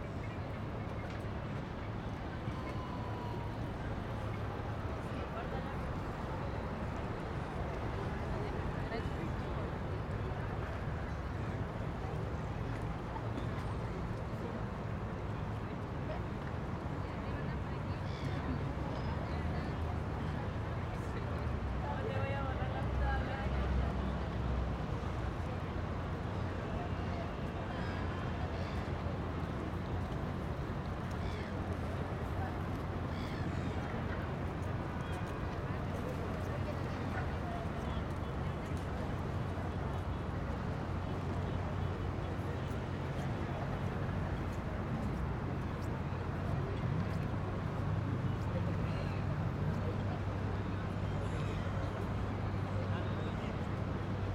{"title": "Yungay, Valdivia, Los Ríos, Chili - AMB VALDIVIA HARBOUR LARGE SEA LIONS BIRDS BOAT WET TRAFFIC FAR MS MKH MATRICED", "date": "2022-08-19 18:00:00", "description": "This is a recording of the harbour located in Valdivia. I used Sennheiser MS microphones (MKH8050 MKH30) and a Sound Devices 633.", "latitude": "-39.81", "longitude": "-73.25", "altitude": "6", "timezone": "America/Santiago"}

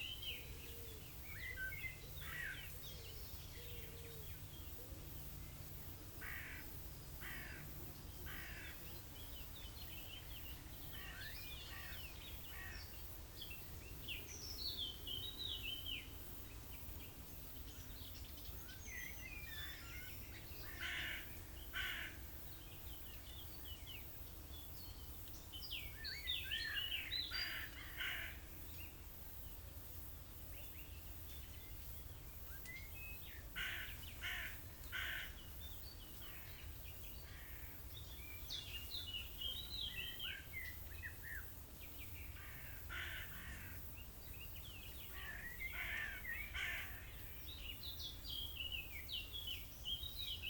{"title": "Lago di Martignano, Anguillara Sabazia RM, Italy - On a dusty road", "date": "2021-06-20 10:31:00", "description": "Between Bracciano and Martignano lakes, in the old dried crater called \"Stracciacappe\".\nWind, distant planes and some occasional cyclist that passes on the track chatting. Lot of animals, mainly crows.\nUsing Clippy EM 272 into Tascam DR100 MKII hanged on tree branches (sort of AB stereo recording spaced approx. 1m)\nNo filter applied, just some begin/end trim", "latitude": "42.11", "longitude": "12.30", "altitude": "230", "timezone": "Europe/Rome"}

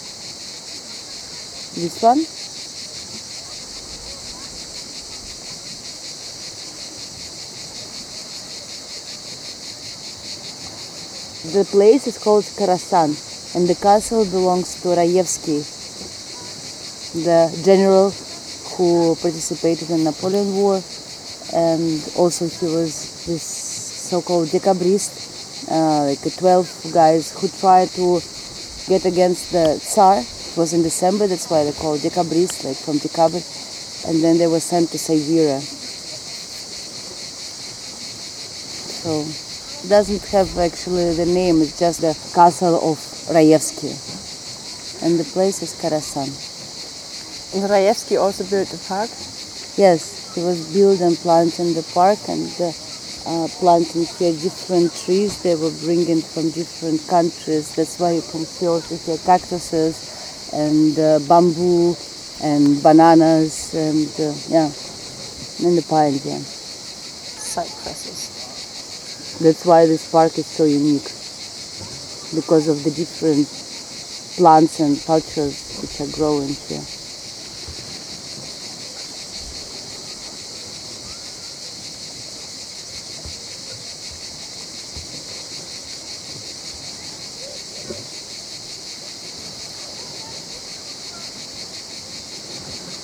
{"title": "Villa and Botanic Garden, Karasan (by Alushta Uteos), Crimea, Ukraine - botanic garden, above the sea, cicades & waves", "date": "2015-07-18 16:15:00", "description": "Sitting above the beach, listening to the cicades, a rinse and seawaves with the zoom recorder. svetlana introduces the villa / castle, afar kids play in the water.\nkarasan, once a village, got sourrounded by a sanatorium complex built in the 60ies. the about 80 former citizens at the time were resettled to other towns when the whole area was sold to an infamous gas-company. only two residents remained protesting. they are still there. we live here, inmidst a forest from pine, bamboo, cypresses, olives and peaches with that 86-year-old woman in a pretty hut.\ndon't mind the broken windows of the sanatorium, it is still intact, old lung-patients dry their self-caught fish. the soviet sport-site falling apart in the sun it looks like greek ruins from centuries ago - the tourists are dying out.", "latitude": "44.59", "longitude": "34.36", "altitude": "43", "timezone": "Europe/Simferopol"}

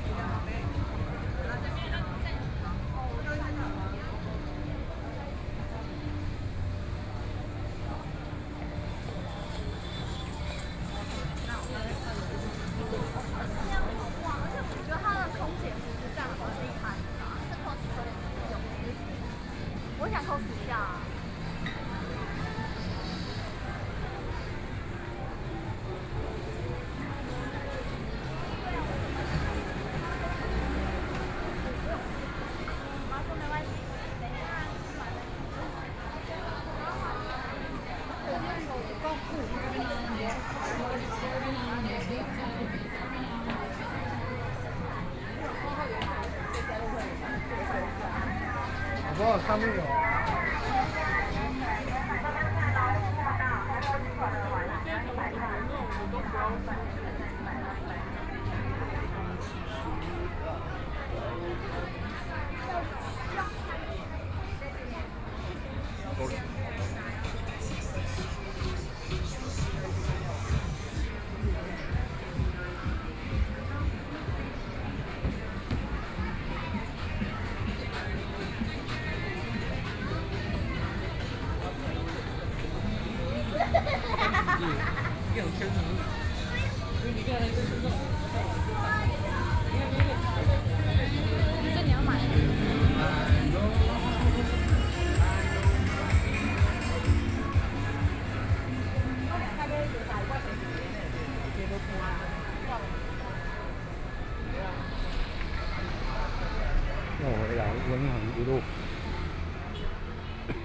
{"title": "新崛江商圈, Kaohsiung City - Shopping district", "date": "2014-05-15 19:49:00", "description": "Walking through the shopping district", "latitude": "22.62", "longitude": "120.30", "altitude": "12", "timezone": "Asia/Taipei"}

